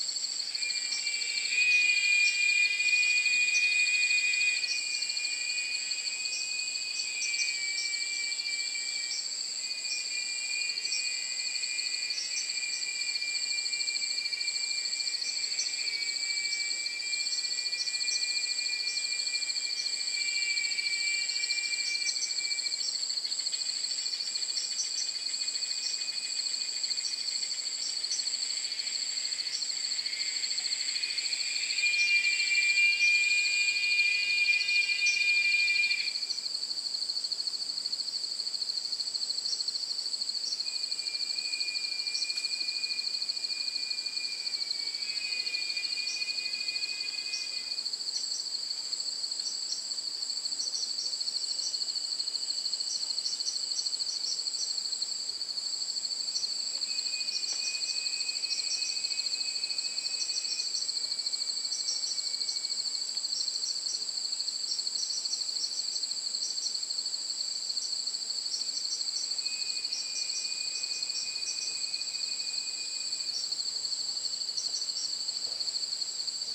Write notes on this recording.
Bugs and birds getting excited for the evening's activities by the shore of Lake Bacalar, the "Lake of Seven Shades of Blue" in the Yucatan. Listen for this absurdly loud cicada type bug which sounds like an intermittent electronic alarm. One of the bugs went off right next to the microphone causing an ear detonation, so I lowered that moment by 15 dB...